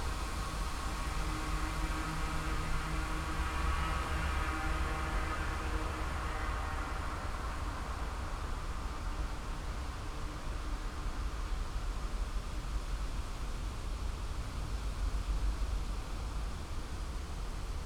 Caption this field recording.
Beermannstr., garden entrance near houses, night ambience, wind, city hum and passing train, (Sony PCM D50, DPA4060)